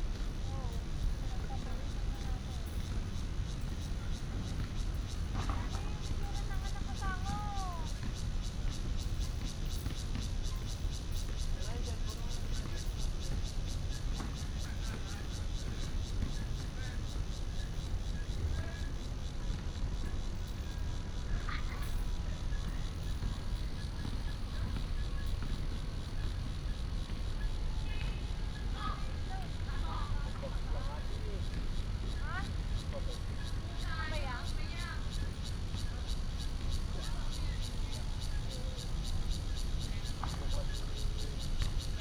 元生公園, Zhongli Dist., Taoyuan City - in the Park
in the Park, Cicada cry, traffic sound
Taoyuan City, Taiwan, 18 August 2017, ~18:00